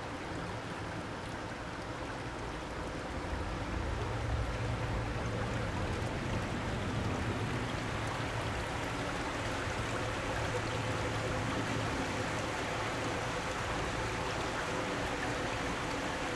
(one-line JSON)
{"title": "永豐圳, Xindian Dist., New Taipei City - Irrigation waterway", "date": "2012-02-21 13:04:00", "description": "Stream and Birds, Irrigation waterway, Aircraft flying through\nZoom H4n + Rode NT4", "latitude": "24.95", "longitude": "121.52", "altitude": "46", "timezone": "Asia/Taipei"}